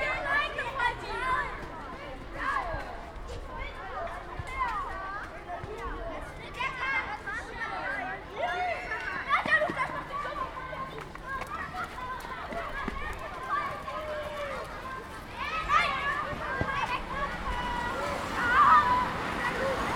Lülsdorf, Niederkassel, Deutschland - Schulhof, Pause / Schoolyard, break
Kinder rechts vom Mikrophon auf dem Schulhof, Kinder auf dem Weg zur Schule und Autos links vom Mikrophon / Children right from the microphone in the schoolyard, children on their way to school and cars left from the microphone
Niederkassel, Germany, 20 May 2015